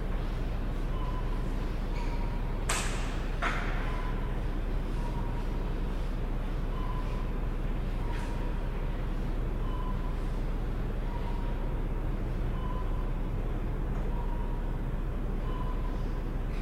Чоп, у вокзала - at chop's train station - at chops train station
spending time 4am
Закарпатська область, Україна, 27 March